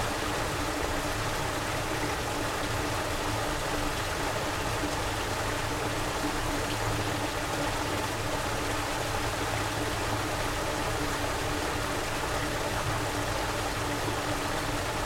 Deguliai, Lithuania, in broken pipe
Some broken pipe under the road. small mics inside.
Utenos apskritis, Lietuva, July 2022